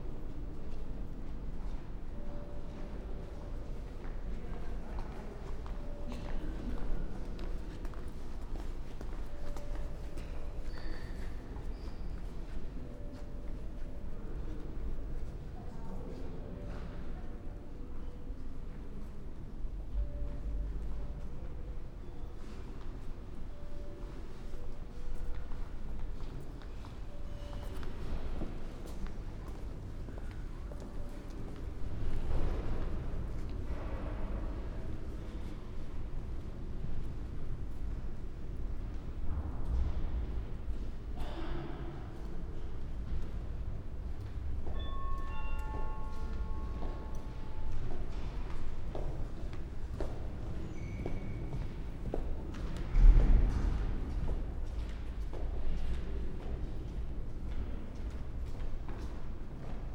berlin, john-f.-kennedy-platz: townhall - the city, the country & me: townhall, citizen centre
ambience of the hall in front of the citizen centre
the city, the country & me: october 29, 2014